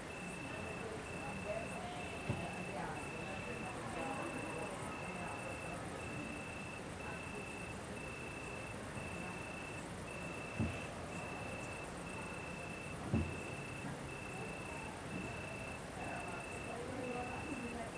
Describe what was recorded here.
[Hi-MD-recorder Sony MZ-NH900, Beyerdynamic MCE 82]